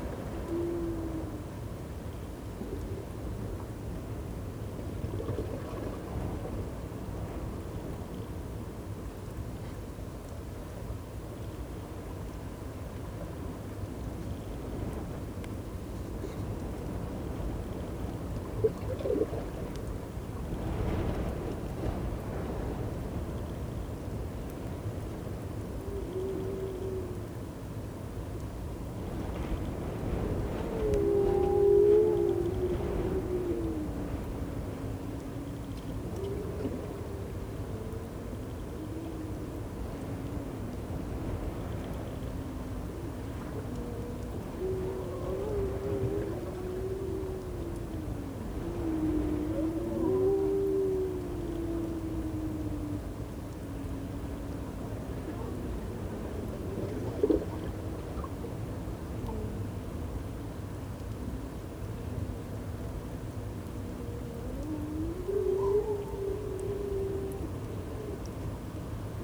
Queens, NS, Canada - Distant harbour Seals calling amongst the wind and waves
On a cold, stormy day seals call from this offshore rock. Their cries gust in the far distance and the wind. The ragged shoreline of the Kejimkujik National Park is very beautiful. Behind the low plants and small trees are in full autumn colours, reds, browns, purple, yellows and oranges.